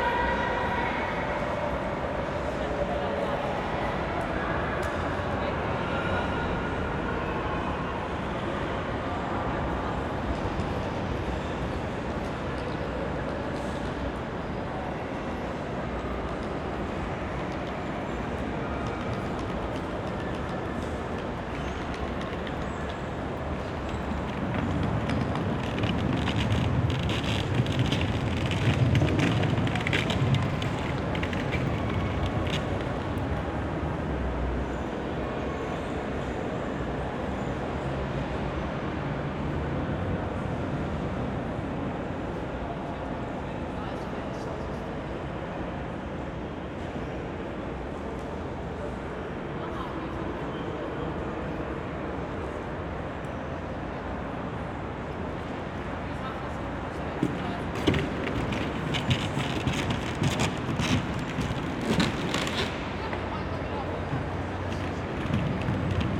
Praha, hlavní nádraží, old station hall - old station hall, steps and ambience
the cafe has disappeared, no vienna waltz here anymore. only few people are using this entrance. steps of passers-by on a piece of wood on the floor, hall ambience.
(SD702, AT BP4025)